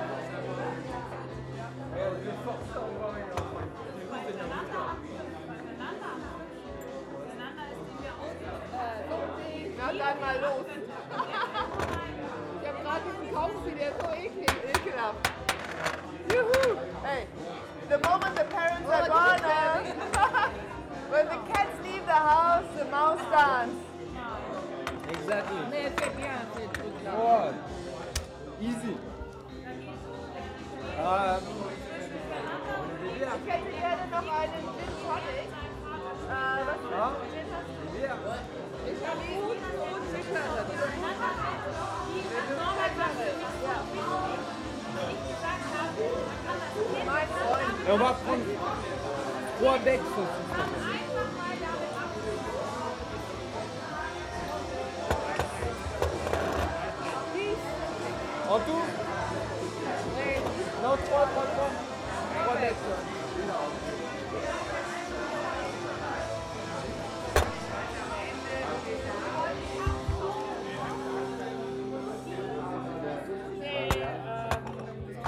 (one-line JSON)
{"title": "berlin, manteuffelstraße: club - the city, the country & me: confusion of tongues?", "date": "2016-07-03 02:03:00", "description": "french tourist ordering tequila\nthe city, the country & me: july 3, 2016", "latitude": "52.50", "longitude": "13.43", "altitude": "40", "timezone": "Europe/Berlin"}